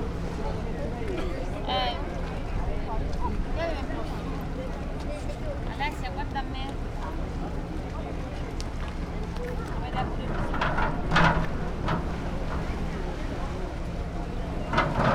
Vernazza, marina - ferry passengers
ferry arrives at the marina in Vernazza. The platform is lowered and passengers are leaving the boat.